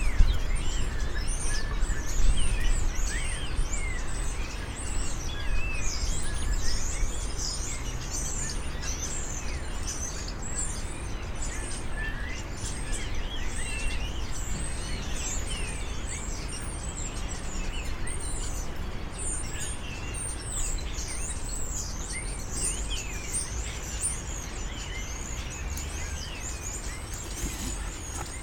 Thamesmead, UK - Birds of Southmere Park Way
Recorded with a stereo pair of DPA 4060s and a Marantz PMD661.